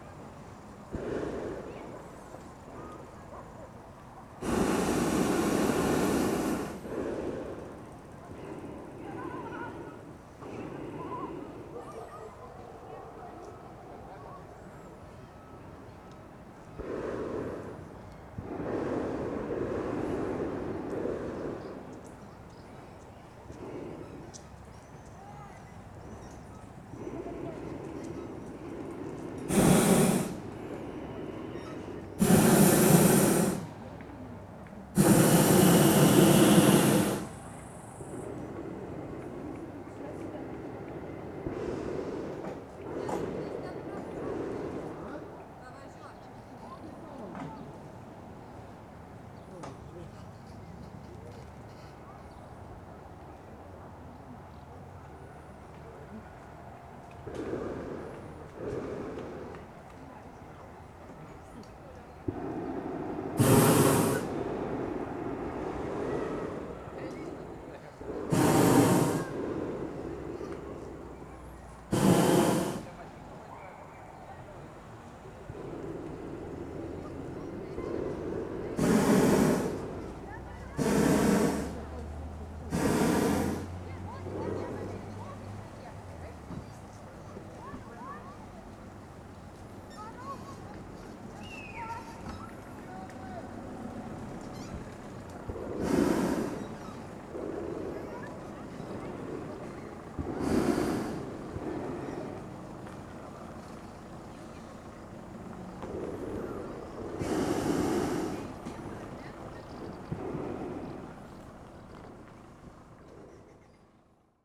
Lithuania, Utena, hot air balloons over city
sounds from Lithuanian XIX hot air balloons championship